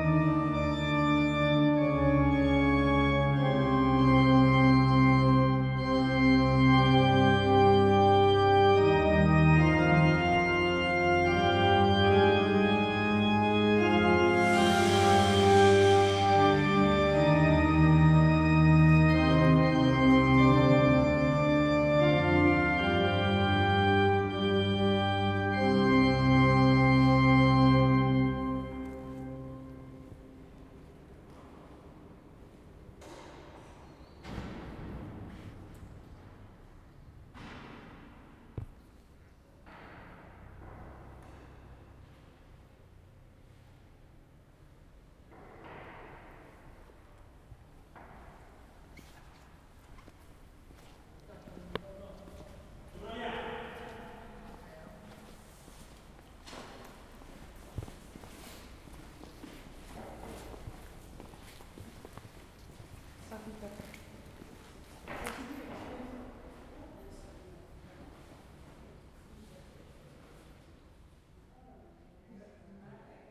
somebody checking the organ in the church before the celebration, while others are mending something on the doors